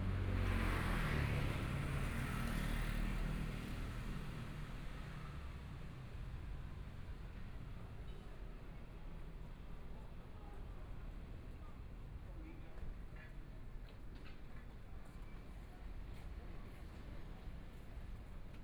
中山區大直里, Taipei City - Walking across the different streets
Walking across the different streets, Traffic Sound, Sunny mild weather
Please turn up the volume
Binaural recordings, Zoom H4n+ Soundman OKM II